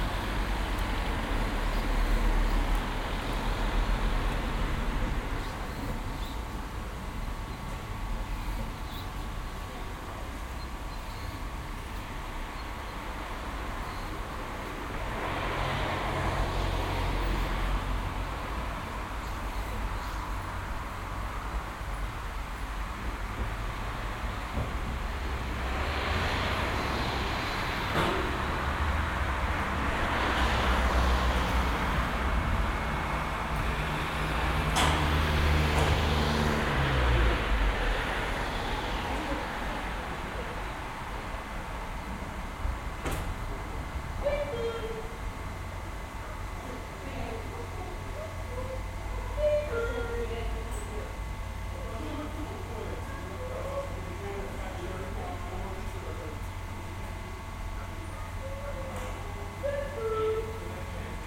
Druskininkai, Lithuania, cable way
Cable way cabins arrining from snow arena to Druskininkai